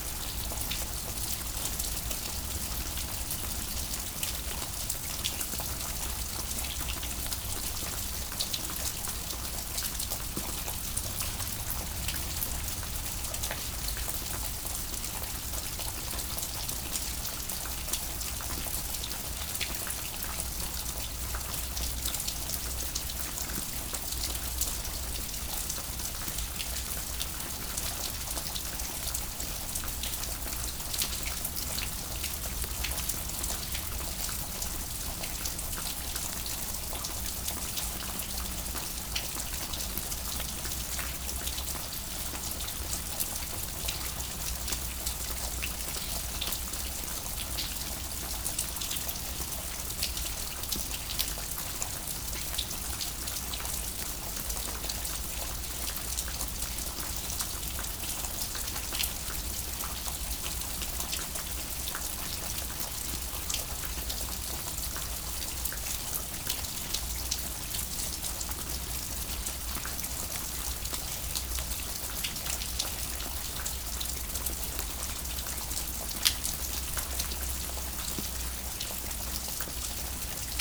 Flumet, France - Stream
A stream is falling from the mountain ; in the entrance of a underground slate quarry, it makes a sound like a constant rain. It's a sunny weather but it's raining everytime here.